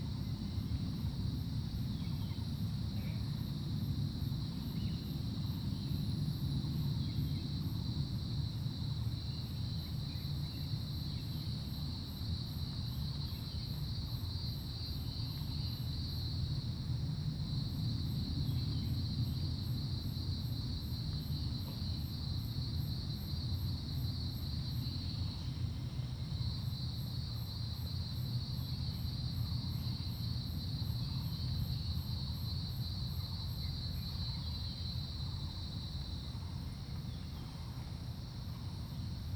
TaoMi Li., 綠屋民宿桃米里 - In the parking lot
Early morning, Bird calls, Aircraft flying through, Cicadas sound
Zoom H2n MS+XY
10 June, 06:00